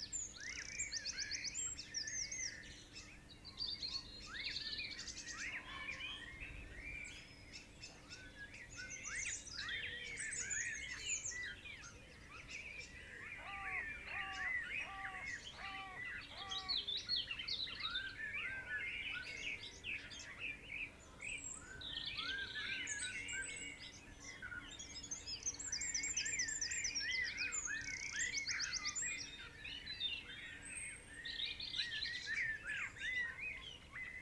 Chapel Fields, Helperthorpe, Malton, UK - Clocks forward blackbird ...
Clocks forward blackbird ... blackbird calls and song ... pair of spaced mics on chair ... blackbird was singing on the back of the chair for some time ... background noise from traffic and planes ...